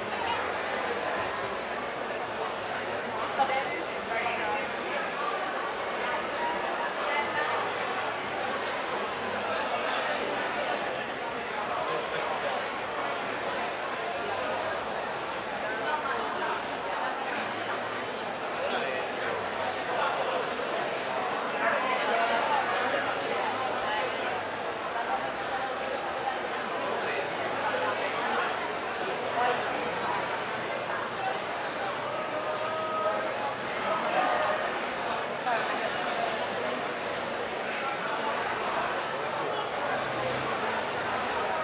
L'Aquila, Piazza Regina Margherita - 2017-06-08 08-Pzza Regina Margherita